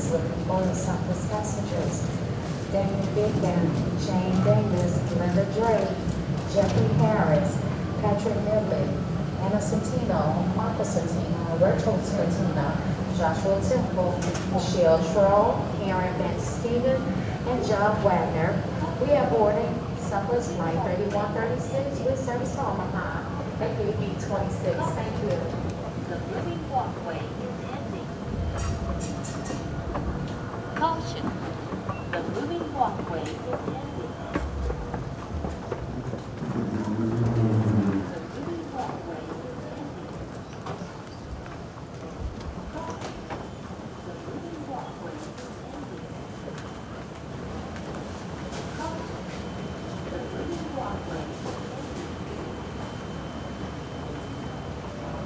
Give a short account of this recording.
waiting for a flight to Los Angeles, on a layover from Pittsburgh, after installing the Svalbard show at the Center for PostNatural History.